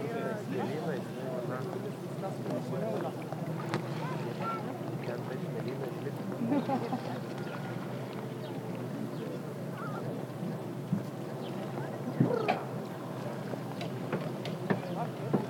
Nida, Lithuania - Start of the peer
Recordist: Anita Černá. Beginning of the peer. Tourists walking, kids playing in the distance, water sounds and a motorcycle passing by. Recorded with ZOOM H2N Handy Recorder.